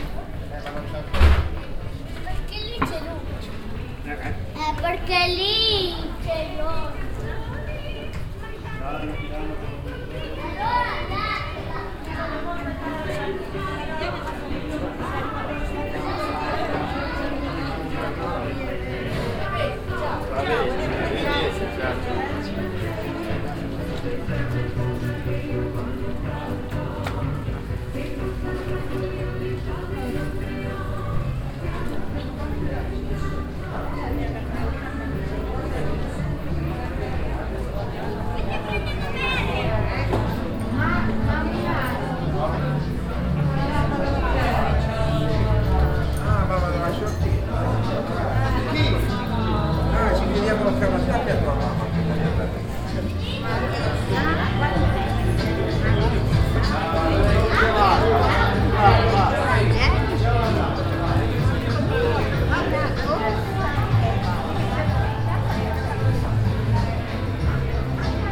villanova, via garibaldi, fiesta cuccina, kantina, musica
seasonal weekend fiesta in the village historical center
soundmap international: social ambiences/ listen to the people in & outdoor topographic field recordings
July 2009